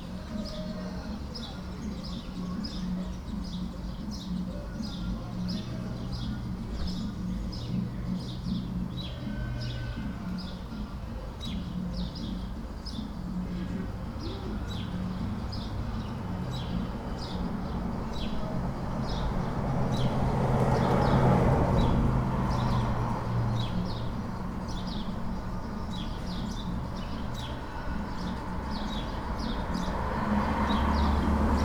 Chapin Ave, Providence, RI, USA - Sunny Saturday Afternoon Neighborhood Ambience
I made this recording at my front window, listening out to the neighborhood on a sunny and warm Saturday afternoon in April. People are starting to come back to life in the neighborhood. Someone playing music down the street with a peculiar reverberation. Traffic including cars, skateboards, motorcycles, people walking. The hounds down the street barking. The motorcycle at 1:30 is loud and distorted, totally clipped. I left it in because it portrays the feeling accurately. Recorded with Olympus LS-10 and LOM mikroUši